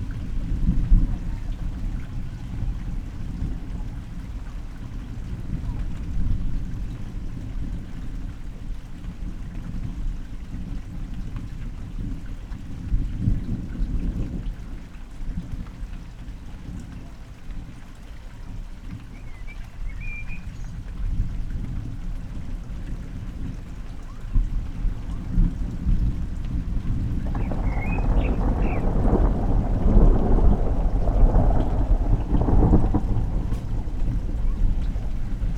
Thunderstorm and Heavy Rain - Malvern, Worcsestershire, UK
A thunderstorm with heavy rain that almost washed out my recording kit. Recorded with a Sound Devices Mix Pre 6 II and 2 Sennheisre MKH 8020s.
England, United Kingdom, 17 June 2020